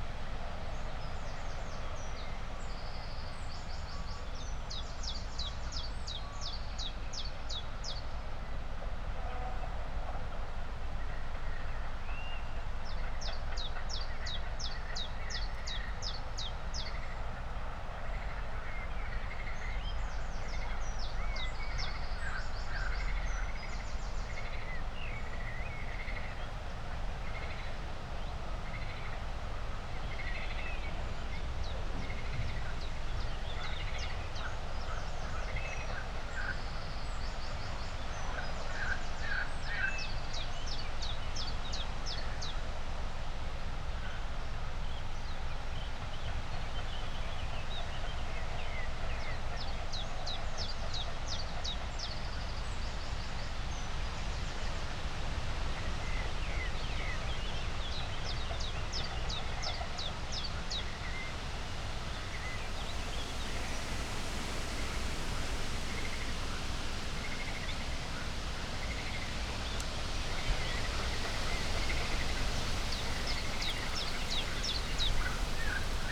Audun-le-Tiche, Frankreich - wind, birds, frogs, distant machinery
ambience on a former industrial field, now overgrown and mostly abandoned, wind, birds, frogs, distant machinery. Behind the pond the river Alzette disappears in a tube which goes all along Rue d'Alzette in Esch.
(Sony PCM D50, Primo EM272)